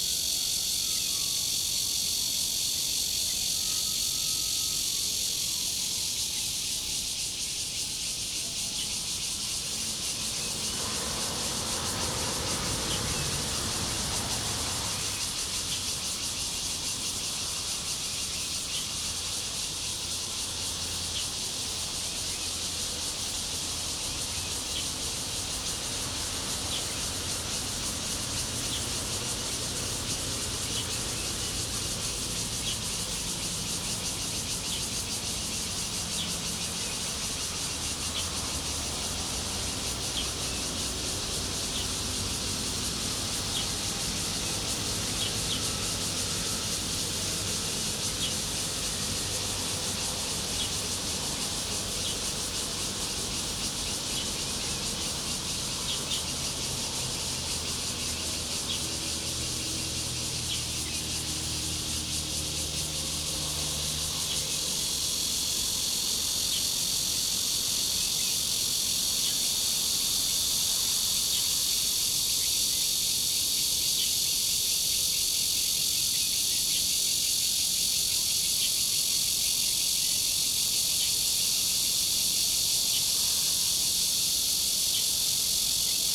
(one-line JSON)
{"title": "Sec., Minfu Rd., Yangmei Dist., Taoyuan City - In the pool side", "date": "2017-08-11 18:16:00", "description": "In the pool side, Traffic sound, Opposite the train running through, Cicadas, Garbage truck passes, Zoom H2n MS+XY", "latitude": "24.92", "longitude": "121.13", "altitude": "138", "timezone": "Asia/Taipei"}